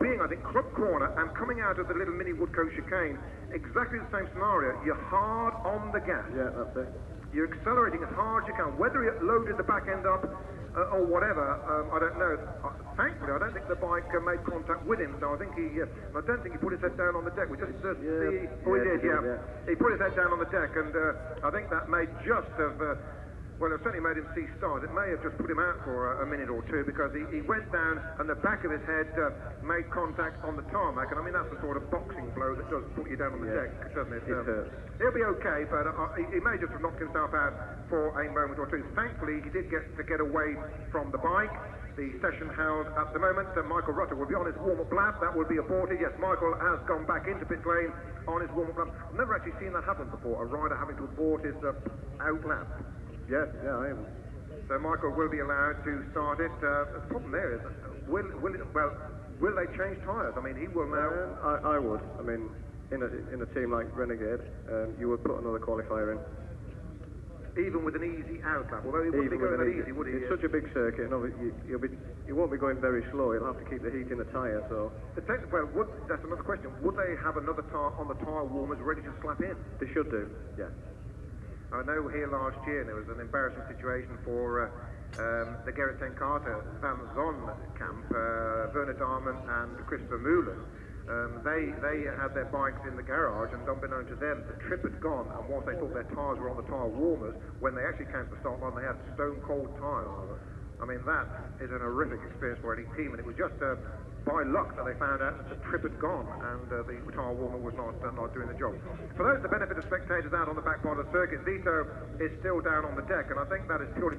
Silverstone Circuit, Towcester, United Kingdom - World Superbikes 2003 ... Super Pole
World Superbikes 2003 ... Super Pole ... one point stereo mic to minidisk ...
June 2003